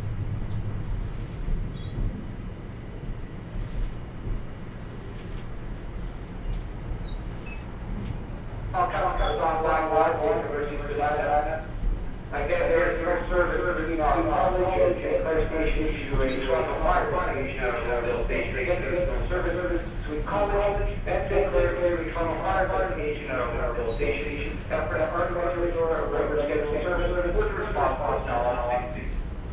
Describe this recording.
Driver's voice echoes as he announces delays on Toronto subway line.